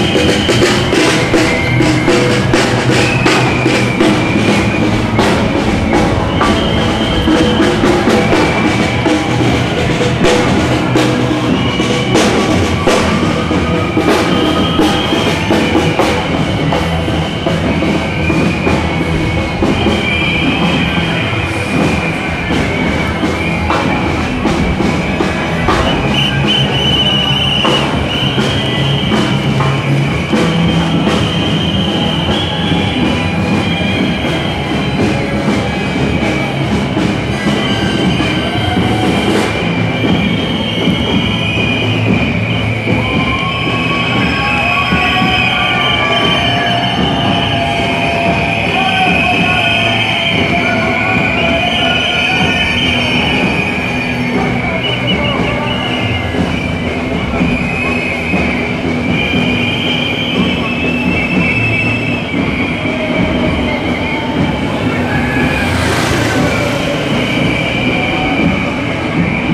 Zagreb Pride 2011. 1 - up to 4000 participants
a peacefull and dignified claim for recognition of human rights, supported by many citizens
City of Zagreb, Croatia, 18 June 2011, ~15:00